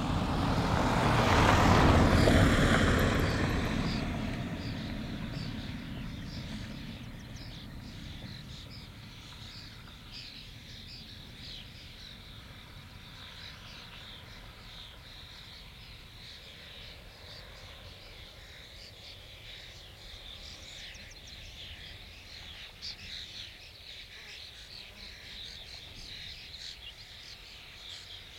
Griūtys, Lithuania, a meadow soundscape
birds feasting on freshly cut meadow grass, cars passing by on gravel road
Utenos apskritis, Lietuva